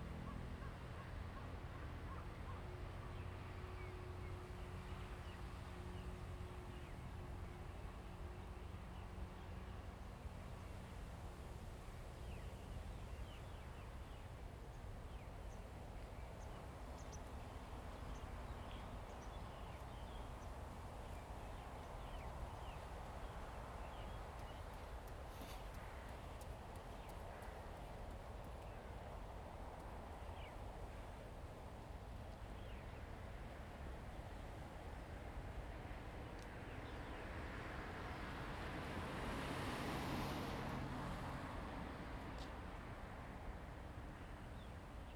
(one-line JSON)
{"title": "太湖, Kinmen County - At the lake", "date": "2014-11-04 16:12:00", "description": "At the lake, Birds singing, Wind, In the woods\nZoom H2n MS+XY", "latitude": "24.44", "longitude": "118.43", "altitude": "22", "timezone": "Asia/Taipei"}